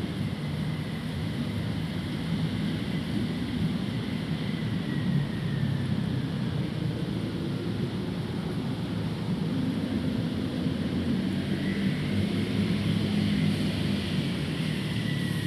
Giardino Garibaldi, Palermo - Wildways Residency Walk Score 3
A section of audio was taken at this location in response to an experimental score provided by Sofie Narbed as part of the Wildways: People Place and Time online residency; "Take your attention to the surfaces that surround you, their spread and lines and textures. Mould your body to a surface. You could stand or lie or go upside-down or roll maybe. Try and stay connected with the surface for a few minutes if you can. What are things like from here?". The recording was taken from a position with my back pressed against the bark of a tree and the microphones placed on the bark of the tree at ear height. Ambient recording at this location using a Zoom h5 and a matched pair of Clippy EM272 high sensitivity omni-directional low noise microphone's.